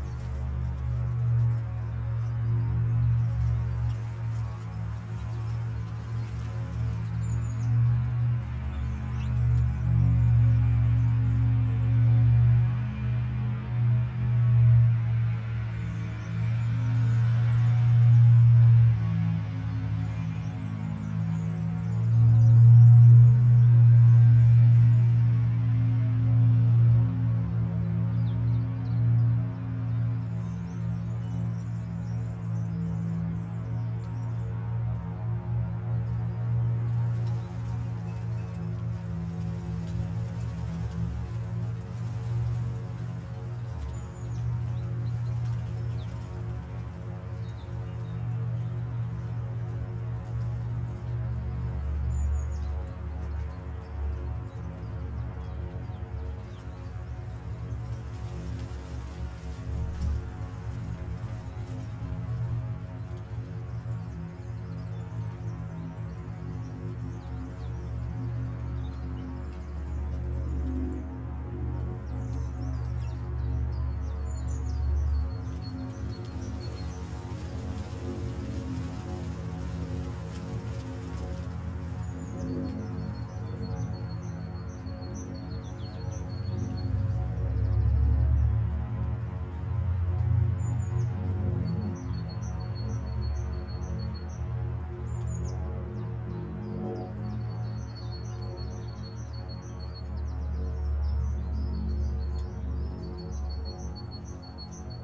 Bonaforth Grabeland, Deutschland - Inside water pipe
The microphone is placed at 3 meters depth inside the pipe of a well with suction hand pump.
Recorded with a DIY microphone based on EM172 capsule and SD702.
Hann. Münden, Germany